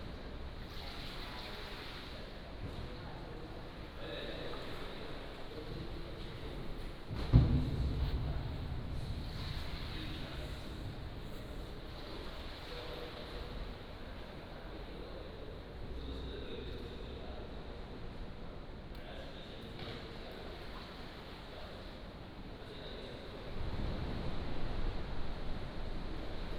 {"title": "北海坑道, Nangan Township - Readiness tunnel", "date": "2014-10-14 14:24:00", "description": "walking in the Readiness tunnel", "latitude": "26.14", "longitude": "119.93", "altitude": "22", "timezone": "Asia/Taipei"}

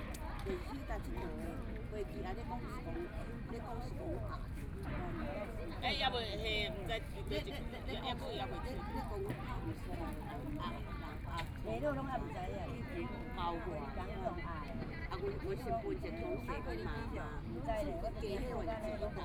{"title": "Bihu Park, Taipei City - Chat between elderly", "date": "2014-03-15 16:39:00", "description": "Sitting in the park, Chat between elderly\nBinaural recordings", "latitude": "25.08", "longitude": "121.58", "timezone": "Asia/Taipei"}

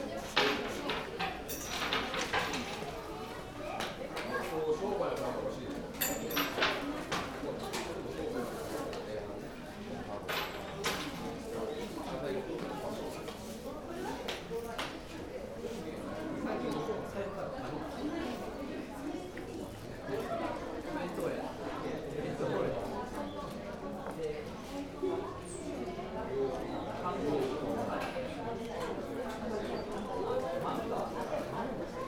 {"title": "Osaka, Osaka Castle area, Hōkoku Shrine - Shintō believers at the shrine", "date": "2013-03-30 18:38:00", "description": "each person walks up to the entrance, throws a coin into a box, claps twice, bows and in silence makes a humble request or expresses gratitude at the shrine resident.", "latitude": "34.68", "longitude": "135.53", "altitude": "30", "timezone": "Asia/Tokyo"}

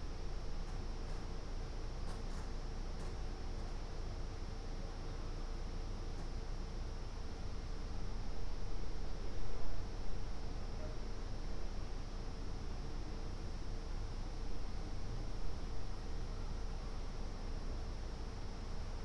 {"title": "Ascolto il tuo cuore, città, I listen to your heart, city. Several chapters **SCROLL DOWN FOR ALL RECORDINGS** - Round midnight last day of students college opening in the time of COVID19", "date": "2020-08-01 00:28:00", "description": "\"Round midnight last day of students college opening in the time of COVID19\" Soundscape\nChapter CXXI of Ascolto il tuo cuore, città. I listen to your heart, city\nSaturday, August 1st, 2020, four months and twenty-one days after the first soundwalk (March 10th) during the night of closure by the law of all the public places due to the epidemic of COVID19.\nStart at 00:28 a.m. end at 01:06 a.m. duration of recording 38’23”\nThe students college (Collegio Universitario Renato Einaudi) close on this day for summer vacation.\nGo to following similar situation, Chapter CXXII, first day of college closing.", "latitude": "45.06", "longitude": "7.69", "altitude": "245", "timezone": "Europe/Rome"}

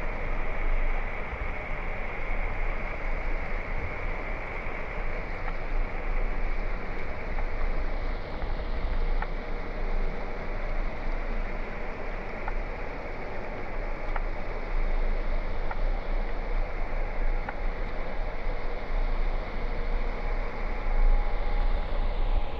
{"title": "Schiemond, Rotterdam, Netherlands - Underwater recording", "date": "2021-04-02 13:00:00", "description": "Underwater recording using 2 hydrophones. Vessels of different sizes", "latitude": "51.90", "longitude": "4.45", "timezone": "Europe/Amsterdam"}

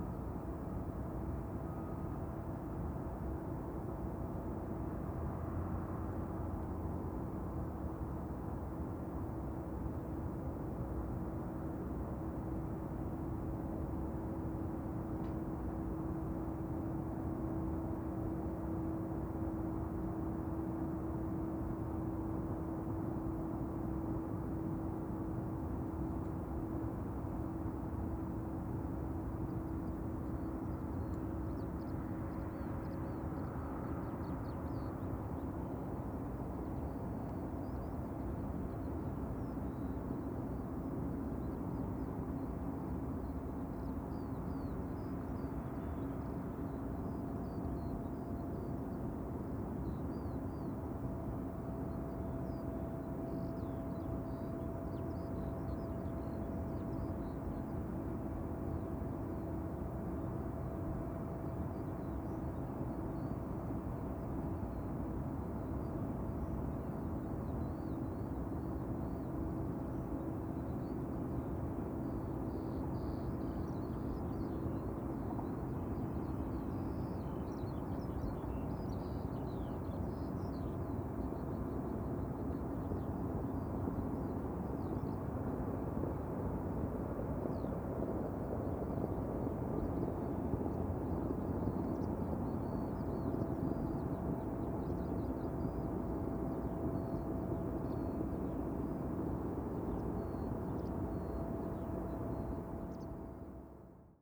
{"title": "Holz, Germany - disappeared - Walking over a dead village, Opencast atmosphere", "date": "2012-04-03 13:18:00", "description": "Despite the Google image this village no longer exists. All that remains are small mounds of yellow earth waiting to be eaten up as part of the huge Garzweiler opencast brown coal mine. These are my footsteps walking over the dead village as far as the current mine precipice followed by the sounds from below.", "latitude": "51.09", "longitude": "6.46", "altitude": "97", "timezone": "Europe/Berlin"}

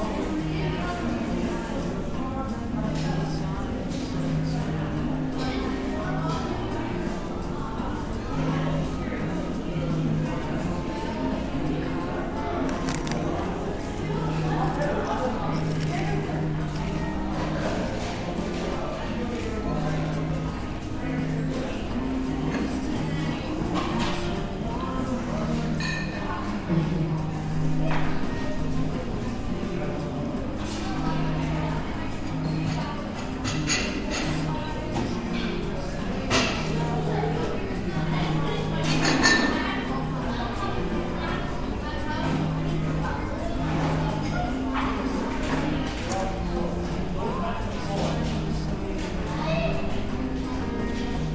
{"title": "West Windsor Township, NJ, USA - Panera Bread", "date": "2014-03-02 17:05:00", "description": "Sitting through a meal at Panera Bread.", "latitude": "40.31", "longitude": "-74.68", "timezone": "America/New_York"}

